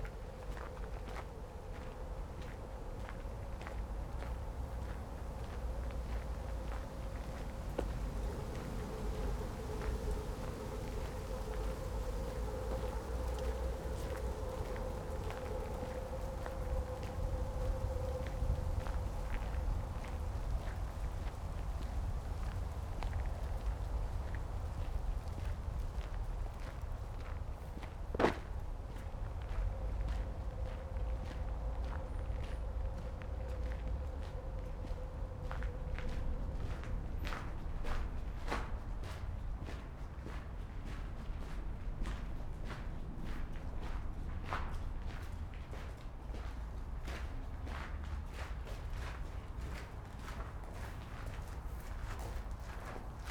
Legiendamm, Berlin, Deutschland - engelbeckenwalk
a walk from Dragon Fountain to Indian Fountain in the direction of the Angel Pool and pass under the Waldemar Road
21 November 2020